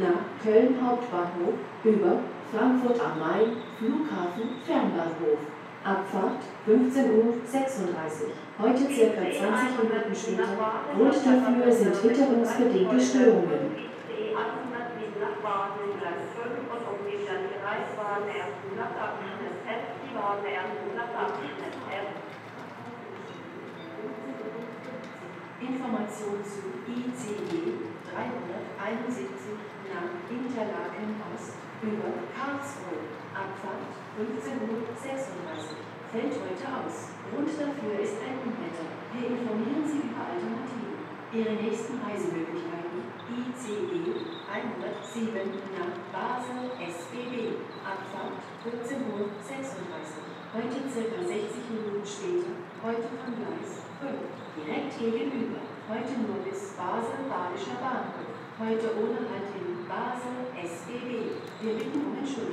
Mannheim Hbf, Willy-Brandt-Platz, Mannheim, Deutschland - main station trains delay and cancelled
after the storm sabine the rail traffic in germany collapsed for some hours, here a recording of the main station mannheim with corresponding announcements.
zoom h6